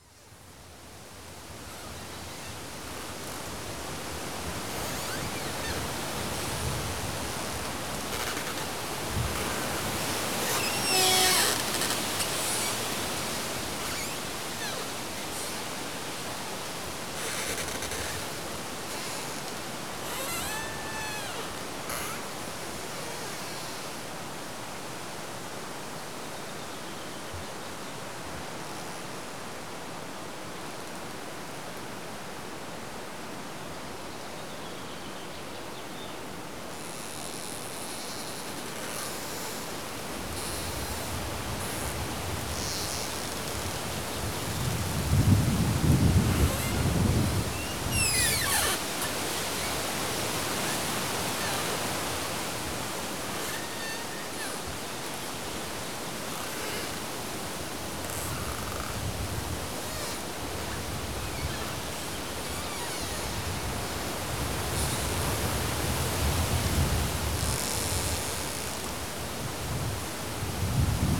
Sasino, road towards forest and sand dunes strip - creaking tree trunk
branches of a willow tree rubbing against its trunk.
29 June 2013, 11:16